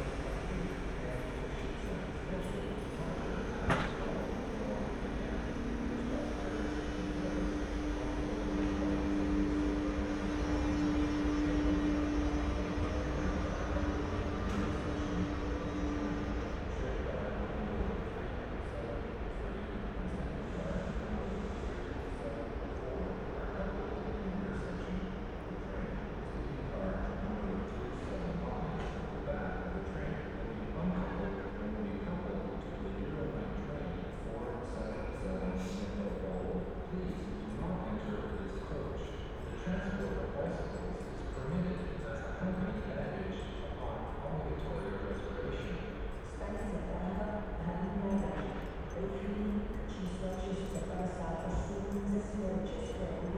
Praha hlavní nádraží (main station) - night ambience, behind trackbed
prague main station heard from a moderate distance. a train arrives and is then shut off for the night.
(SD702, BP4025)
Prague-Prague, Czech Republic, 3 October 2012